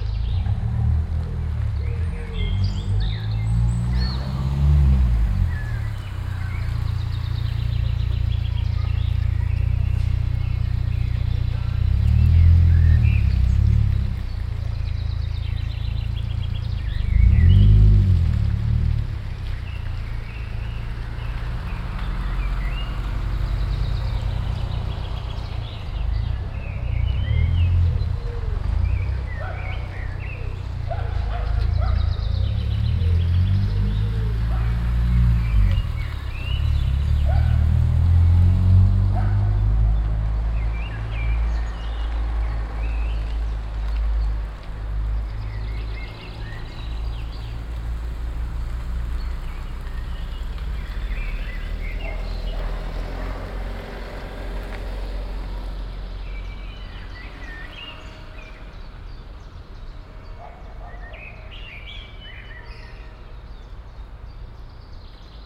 Soundscape of a more quiet part of town. A distant siren, birds (blackbird, robin, sparrow, chaffinch, pigeon, gull), barking dogs, a few slow cars, pedestrians, children, bicycles, chimes of a church bell. Binaural recording, Sony PCM-A10, Soundman OKM II classic microphone with ear muff for wind protection.
Schleswig-Holstein, Deutschland, 14 May 2021, 16:54